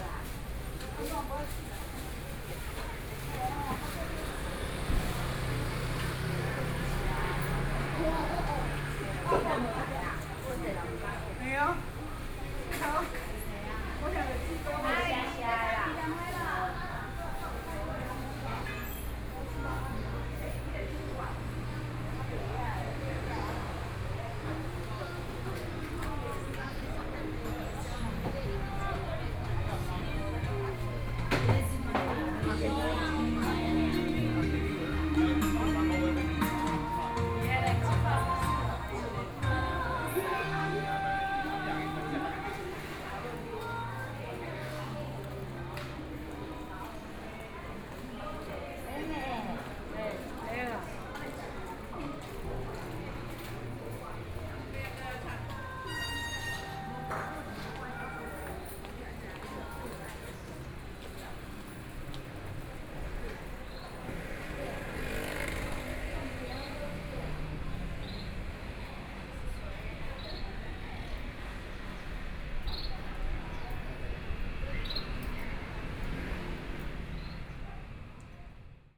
三民區港東里, Kaohsiung City - Traditional Market
Walking through the traditional market
Sanmin District, Kaohsiung City, Taiwan, 16 May 2014